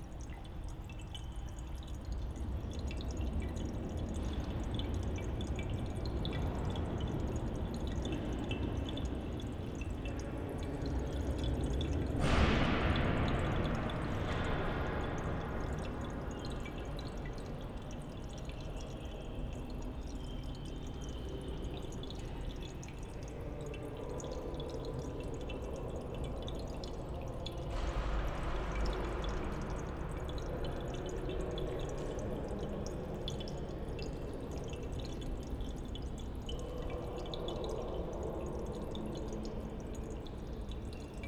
impressive architecture by architects Schultes & Frank, great acoustic inside. in the middle o this space, there's a little fountain with a white egg floating over the water. the deep rumble comes from the heavy iron gates at the entrance.